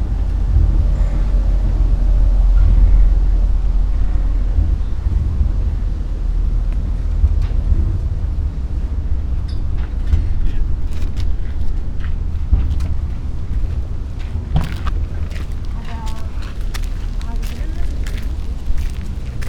river ship deck, Märkisches Ufer, Berlin, Germany - walking, clogs
river Spree
Sonopoetic paths Berlin